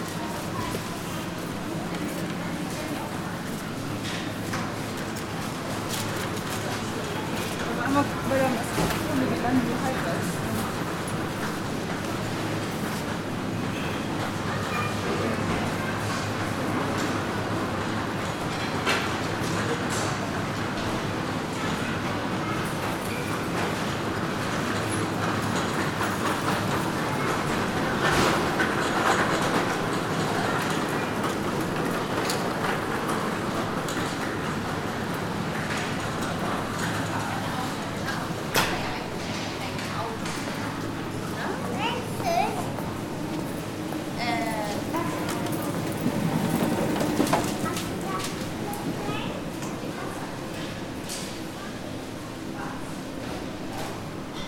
Walking though the aisles of a supermarket, different sounds, people, bone saw at the butcher
iPhone 6s plus with Shure MV88 microphone
Kiel, Germany, 4 March 2017